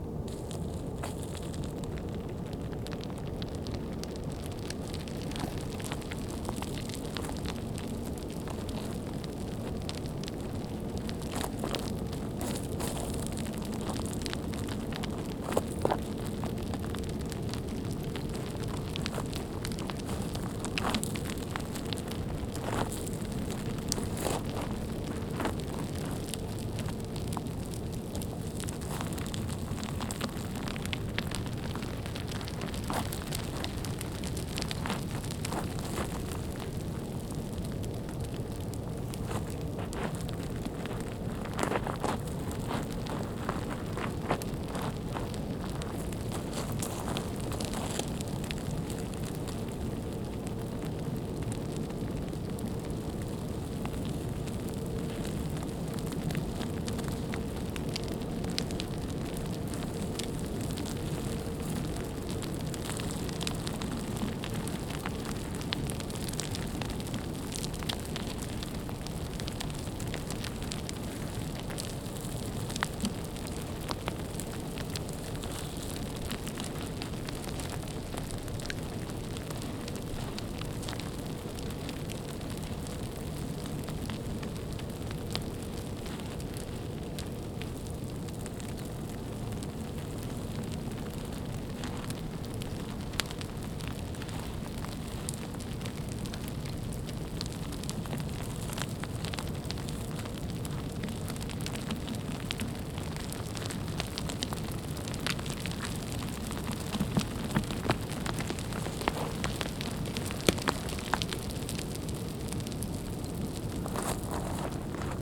Horní Jiřetín, Czechia - avalanche
sesouvajici se kaminky na hrane lomu
17 October 2018